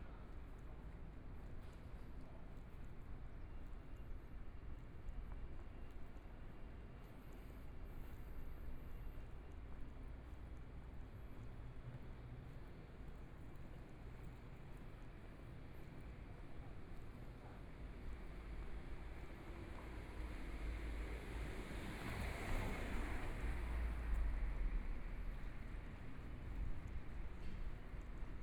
Tianxiang Rd., Taipei City - Small streets
walking in the Tianxiang Rd.Traffic Sound, Binaural recordings, Zoom H4n+ Soundman OKM II